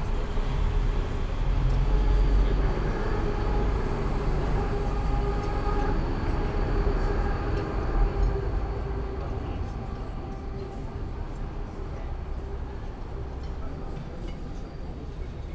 Spittelwiese/Landstraße, Linz - outside cafe ambience
at a cafe near the main road Landstrasse, people, trams, cars, outside cafe ambience
(Sony PCM D50, Primo EM172)